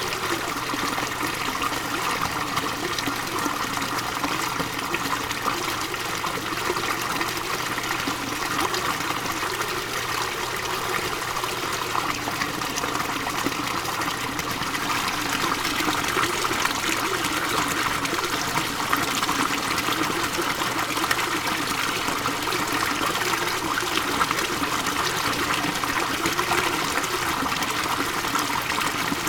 Beitou, Taipei - The sound of water
The sound of water drainage channels, Sony PCM D50